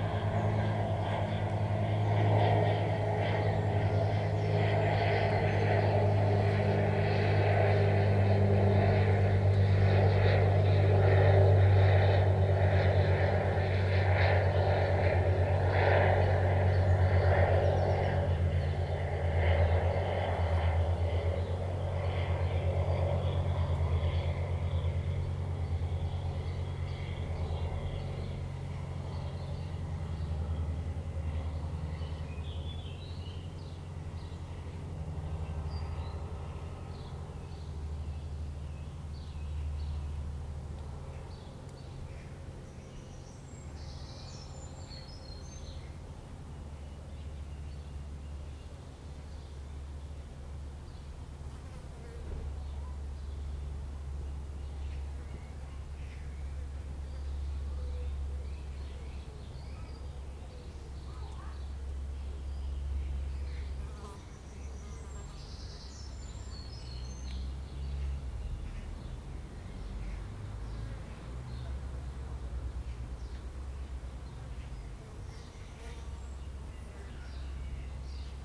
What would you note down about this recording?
Birds, insects and various aircraft. Recorded on a Zoom H2n.